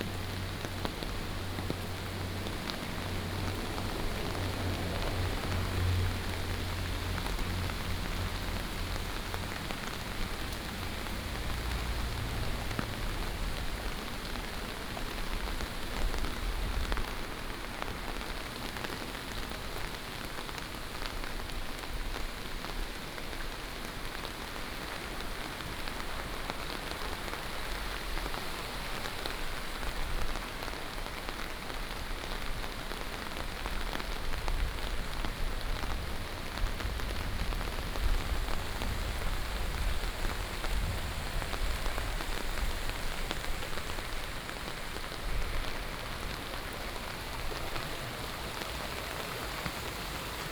Taomi Ln., Puli Township, Taiwan - Thunderstorm
Walking in a small alley, Thunderstorm, The sound of water streams
Puli Township, Nantou County, Taiwan, 12 August, 17:56